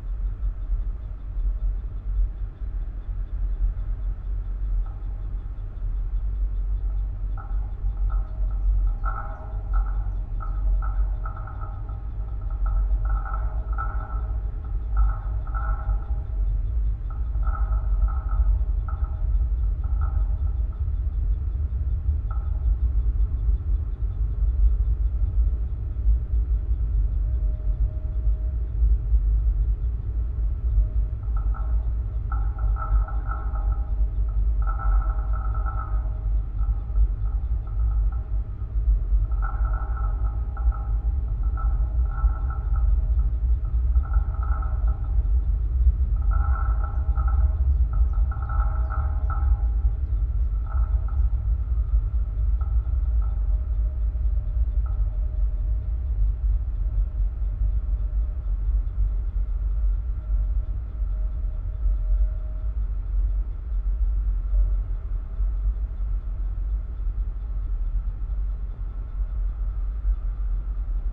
Lithuania, Kloviniai, cell tower's cable
cell tower's support cable recorded with contact microphones
3 July 2013, ~15:00